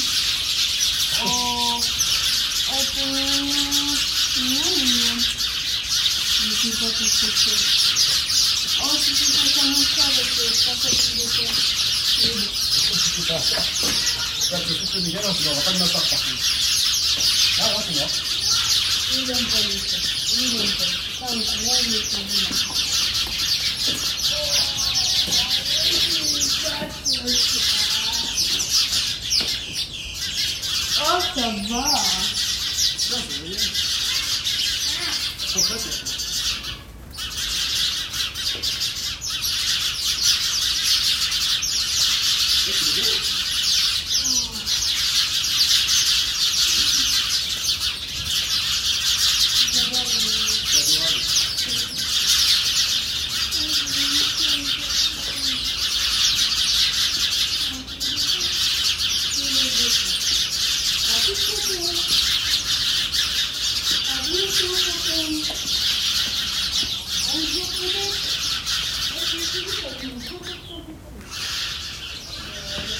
Rue Pascal Tavernier, Saint-Étienne, France - starling & cat
étourneaux (starling) dans un massif puis arrivée d'un chat. Deux promeneurs s'arrêtent et caressent le chat.
Enregistrement via Iphone SE puis normalisation avec Audacity